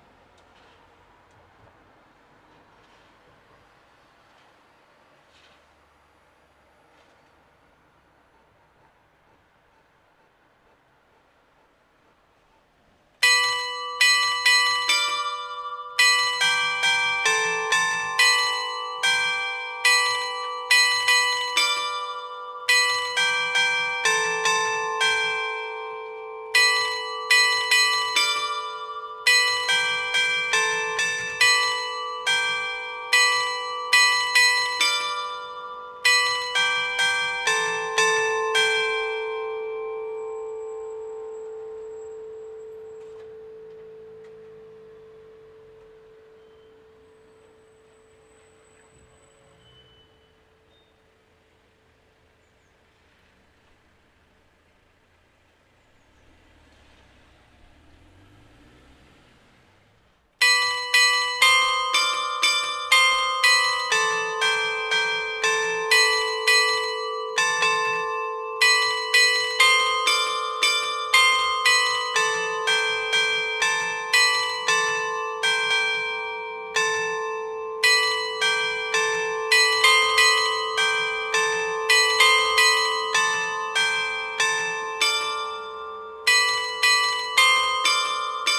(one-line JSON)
{"title": "Rue du Président Poincaré, Quesnoy-sur-Deûle, France - Le-Quesnoy-sur-Deûle - carillon de l'hôtel de ville", "date": "2020-06-14 10:00:00", "description": "Le-Quesnoy-sur-Deûle (Nord)\nCarillon de l'hôtel de ville\nRitournelles automatisées", "latitude": "50.71", "longitude": "3.00", "altitude": "21", "timezone": "Europe/Paris"}